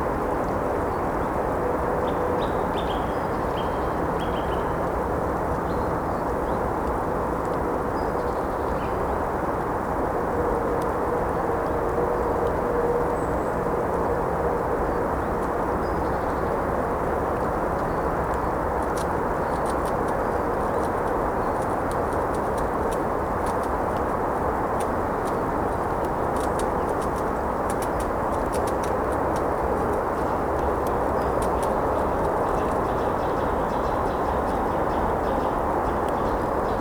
Ranst, Belgium - zevenbergen bos
recorded with H4n and 2 AKG C1000 originally for quadrofonic listenening